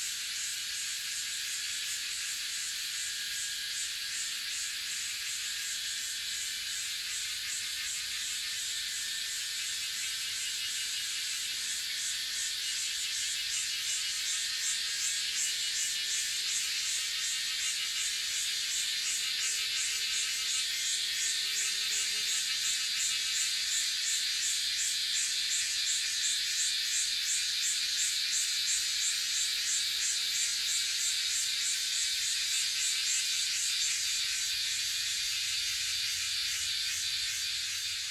{"title": "投64號鄉道, 南投縣魚池鄉 - Cicada sounds", "date": "2016-06-08 08:02:00", "description": "Cicada sounds, Bird sounds, For woods\nZoom H2n MS+XY", "latitude": "23.93", "longitude": "120.89", "altitude": "754", "timezone": "Asia/Taipei"}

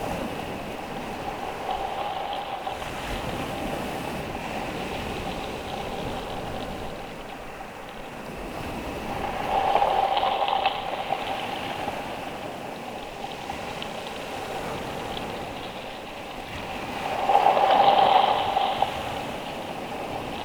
{"title": "Covehithe, UK - Waves sounding in and around a bleached tree trunk lying on the beach", "date": "2020-07-13 16:28:00", "description": "Covehithe is a beautiful and very surreal spot on the Suffolk coast. The sea is eroding the soft sandy cliff at a speed that makes it look different on every visit. Crops disappear over the top frequently. One time the beach below was littered with onions. On this occasion barley has fallen over but is still growing fine in the landslides. Trees from an old wood lie on the sand and shingle bleached white by waves that scour through the roots and remaining branches. The sculptural forms are amazing.\nThe sound of the waves can be heard through tide washed trunks by pressing your ear to the wood and be picked up by a contact mic. The contact mic was recorded in sync with normal mics listening to the waves. This track is a mix of the two layers with the mono tree sound in the middle and the sea in stereo either side.", "latitude": "52.38", "longitude": "1.72", "altitude": "4", "timezone": "Europe/London"}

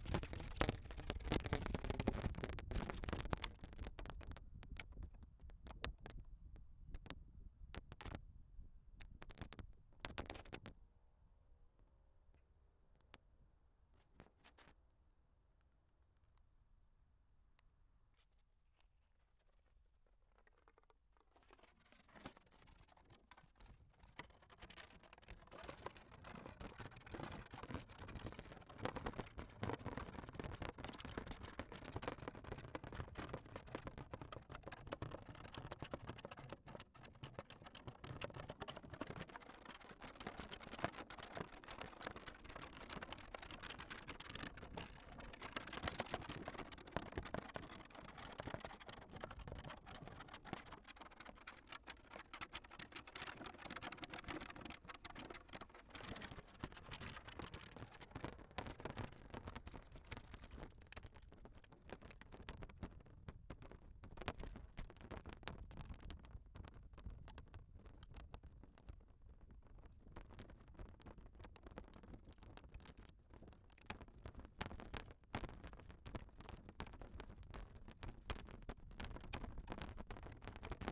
{"title": "a potatoe field near Gapyeong - streamers", "date": "2014-09-01 12:00:00", "description": "streamers of plastic tape used to scare away birds from fields of new vegetables move with the breeze. Rural Gangwon-do. PCM-10", "latitude": "37.82", "longitude": "127.52", "altitude": "60", "timezone": "Asia/Seoul"}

